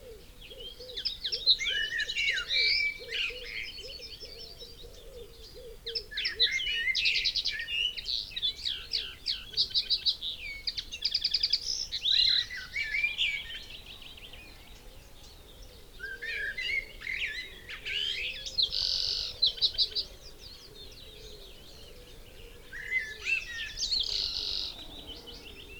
Luttons, UK - Chalk pit soundscape ...
Chalk pit soundscape ... bird calls and song ... wood pigeon ... willow warbler... yellowhammer ... pheasant ... goldfinch ... blackbird ... linnet ... whitethroat ... binaural dummy head ... background noise ...
Malton, UK, 17 May 2011